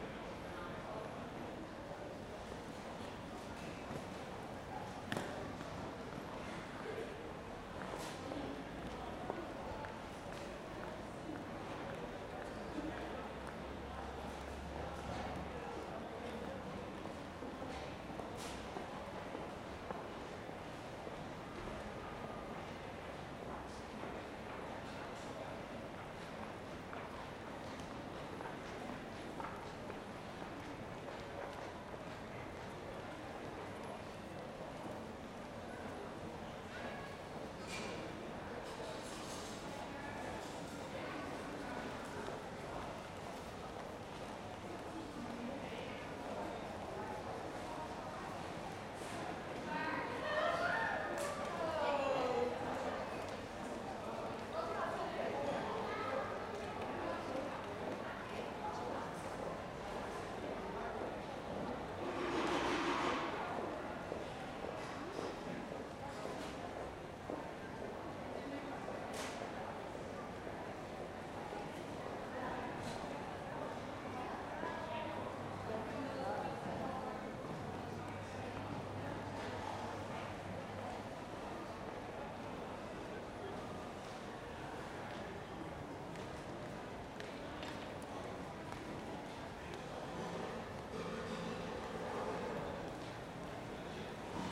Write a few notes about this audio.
some minutes later... slightly different position